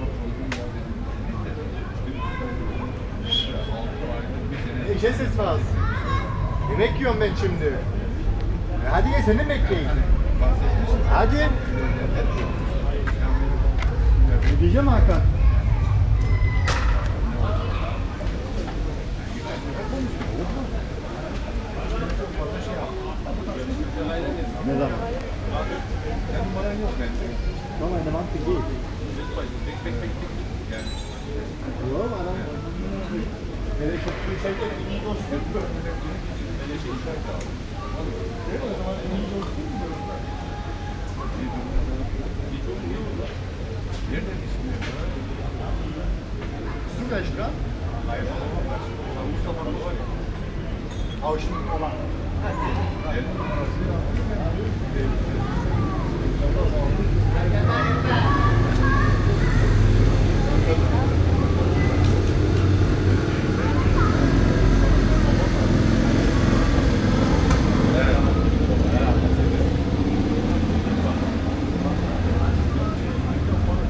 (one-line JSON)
{"title": "Ernst-Reuter-Platz, Monheim am Rhein, Deutschland - Monheim am Rhein - Ernst Reuter Platz - in front of Golden Hans", "date": "2022-07-16 15:04:00", "description": "At the Ernst Reuter Platz in Monheim am Rhein in front of the location \"Goldener Hans\" - the sound of people talking while sitting in front of two turkish restaurants - cars passing by - in the distance child voices\nsoundmap nrw - topographic field recordings and social ambiences", "latitude": "51.09", "longitude": "6.89", "altitude": "43", "timezone": "Europe/Berlin"}